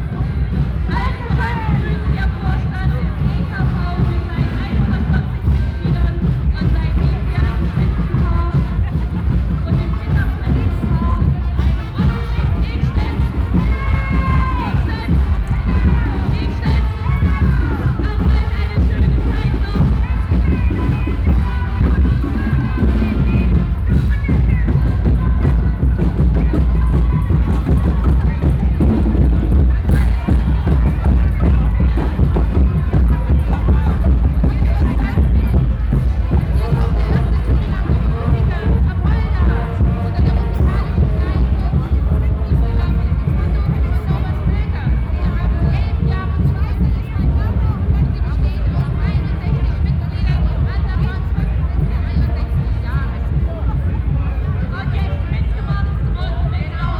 Altstadt, Erfurt, Deutschland - Carnival Procession
A typical procession during the festivities of carnival. Moderator announces the order of associations passing. Binaural recording.
Thüringen, Deutschland, Europe, 19 February, 11:00